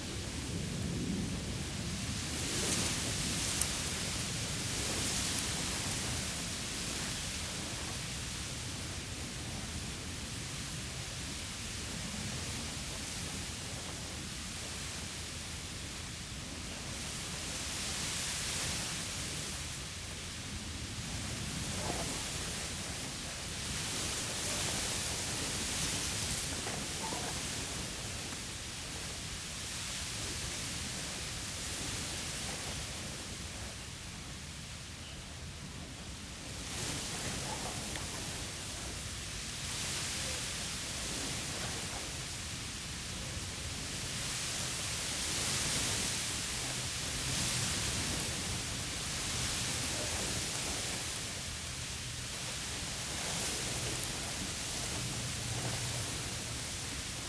Strong wind through reeds in this exposed surreal place, Woodbridge, UK - Strong wind through reeds in this exposed surreal place